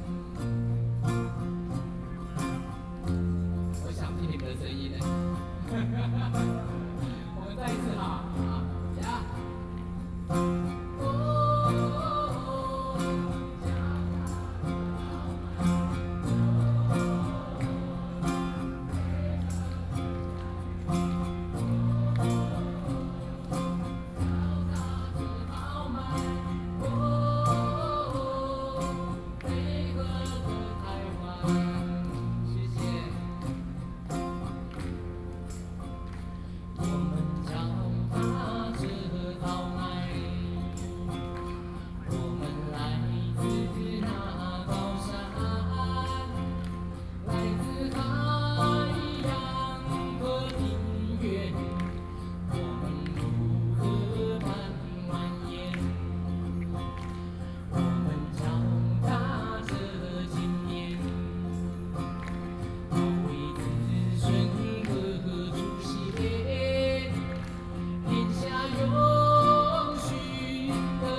Zhongzheng, Taipei City, Taiwan - Nuclear protest songs
Nuclear protest songs, Protest, Hakka singer, Zoom H4n+ Soundman OKM II